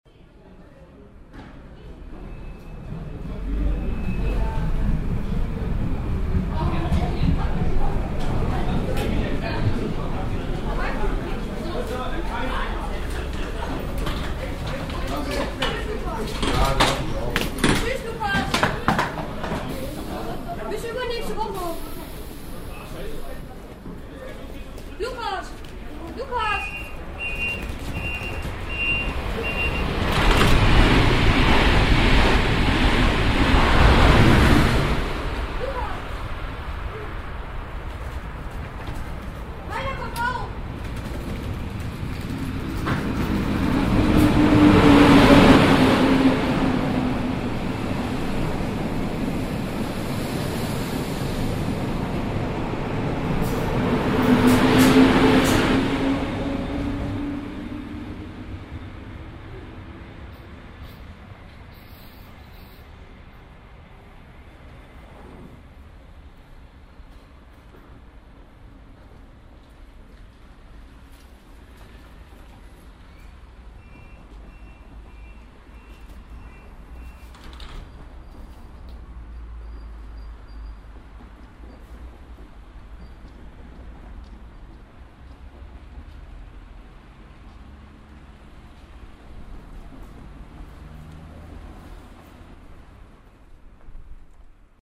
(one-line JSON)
{"title": "hochdahl, bahnhof, gleis", "date": "2008-04-19 10:44:00", "description": "mittags, ein - und abfahrt einer s-bahn, kommunikationen auf dem bahngleis\nproject: :resonanzen - neanderland soundmap nrw: social ambiences/ listen to the people - in & outdoor nearfield recordings", "latitude": "51.22", "longitude": "6.94", "altitude": "137", "timezone": "Europe/Berlin"}